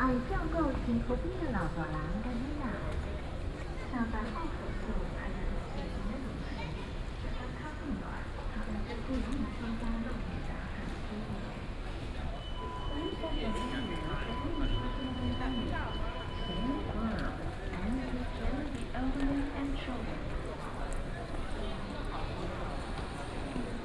Chiayi Station, Taibao City, Taiwan - walking in the Station
In the station, From the station platform to the station hall